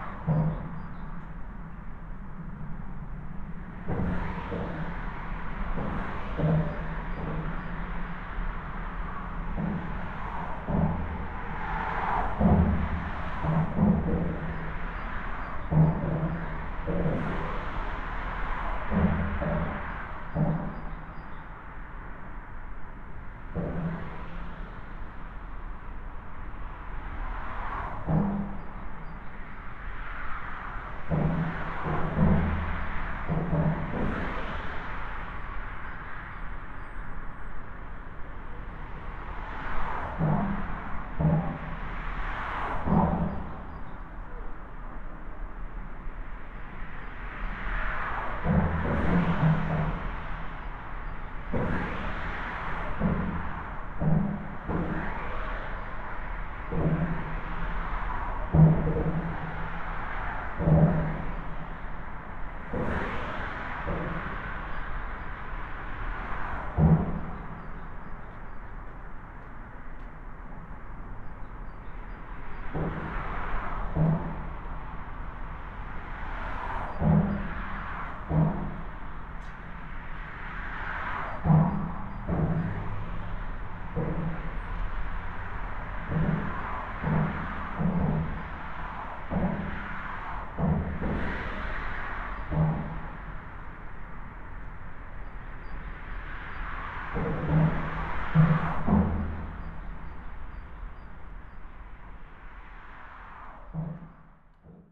Huntebrücke, Oldenburg, Deutschland - sound of traffic below lane
sound of the Autobahn traffic directly under the bridge
(Sony PCM D50)